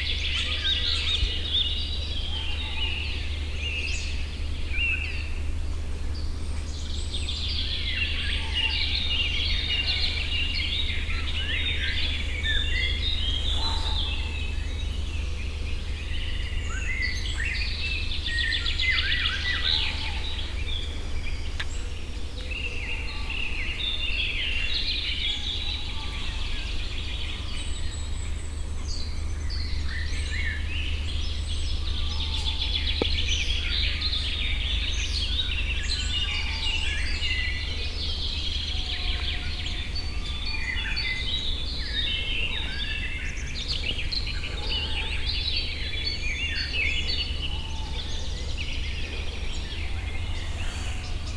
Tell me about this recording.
early morning forest ambience of the Morasko nature reserve. due to early hour there is not much impact of the local traffic. the diversity of bird calls is amazing. some of the calls can be heard only around this hour.